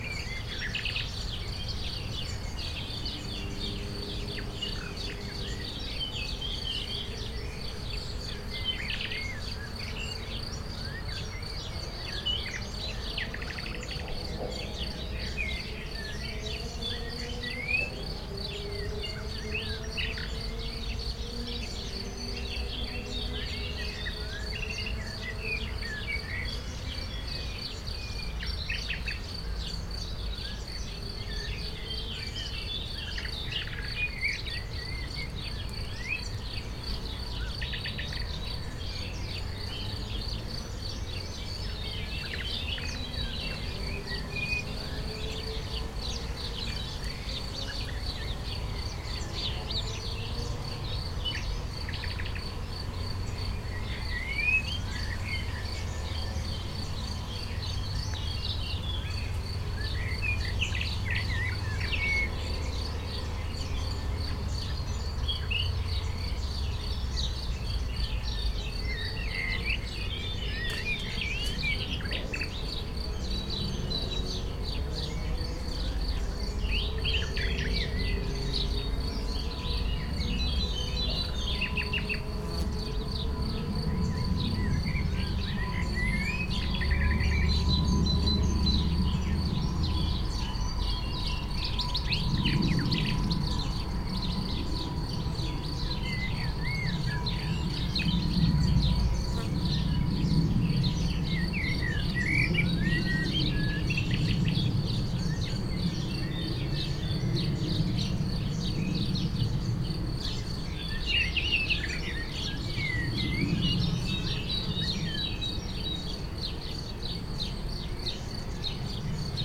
Rue de Vars, Chindrieux, France - Rallye lointain
Dans la campagne à Chindrieux, la cloche sonne, grillons, oiseaux et rallye de Chautagne en arrière plan.